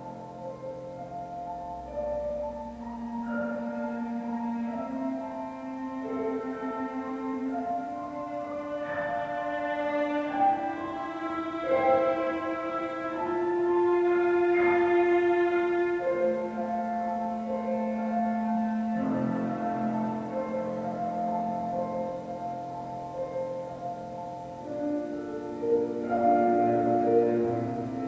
Anykščiai district municipality, Lithuania - Anykščių Koplyčia-Kamerinių Menų Centras
Anykščių Koplyčia-Kamerinių Menų Centras